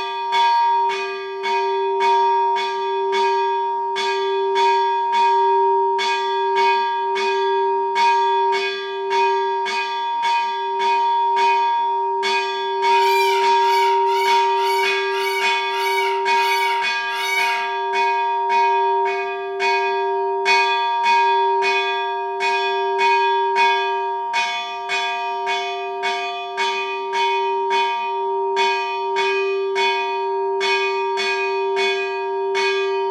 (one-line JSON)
{"title": "CULT/Monastery Nea Moni Chios", "date": "2014-06-12 17:00:00", "latitude": "38.37", "longitude": "26.06", "altitude": "441", "timezone": "Europe/Athens"}